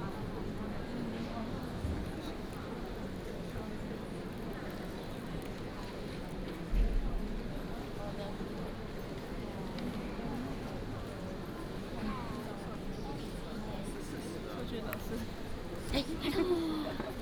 National Theater, Taiwan - Before the show started
Before the show started
13 March, ~14:00